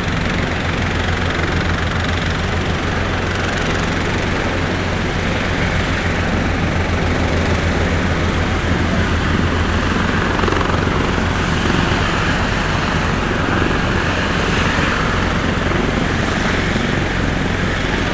5 helicopter taking off from the "place des invalides" in front of the "musée des armées" during the french national day.
Recorder: Zoom H5